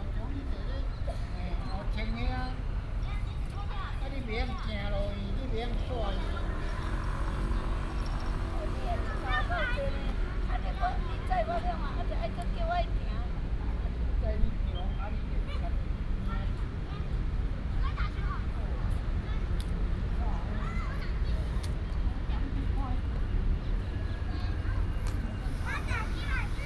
淡水國民運動中心, 崁頂里, Tamsui Dist. - in the Park
in the Park, Traffic Sound
Tamsui District, New Taipei City, Taiwan, 2 April 2016